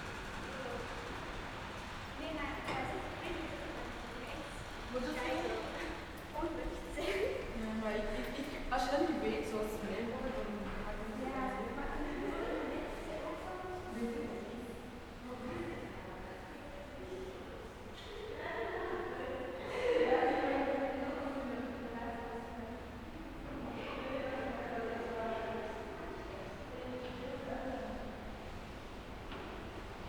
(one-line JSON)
{"title": "Brussel-Congres, Brussel, België - Brussel Congres Entry Hall", "date": "2019-01-31 10:51:00", "description": "Entry hall of the semi-abandoned Brussel-Congres train station. Trains in the tunnels below, a creaking door leading to the tracks where workmen are working. Towards the end, the climate protesters arrive outside.", "latitude": "50.85", "longitude": "4.36", "altitude": "31", "timezone": "GMT+1"}